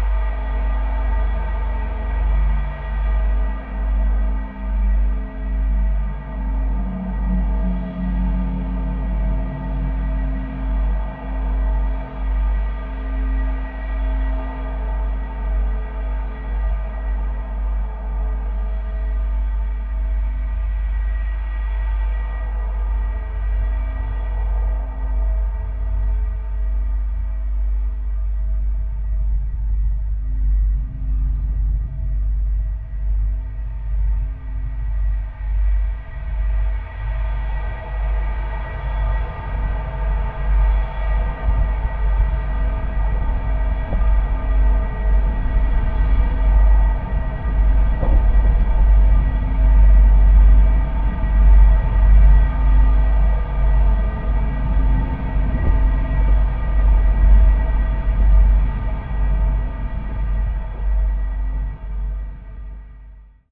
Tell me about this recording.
This is the bridge that I used to cross nearly every day to go to high school. I would have made a longer recording but it was a bit too windy for the microphones, I will return though! Recorded with two JRF contact microphones (c-series) into a Tascam DR-680.